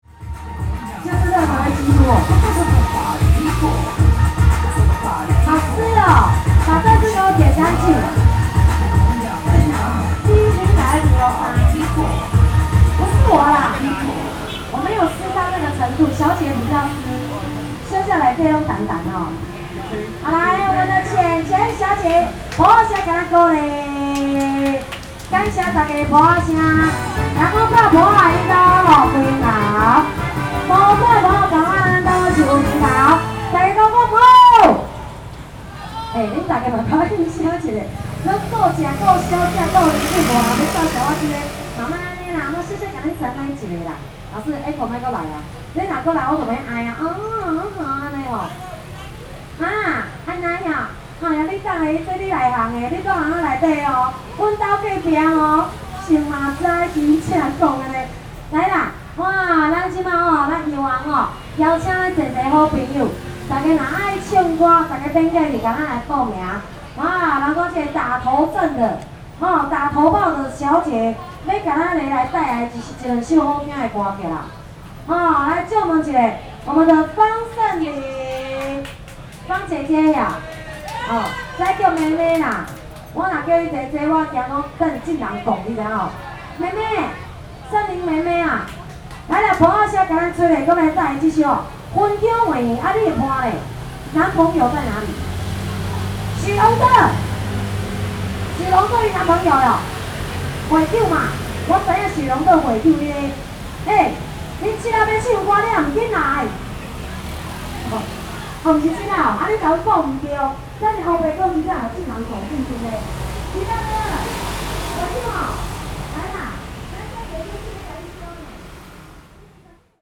Recreation party, Moderator was speaking erotic words, Zoom H4n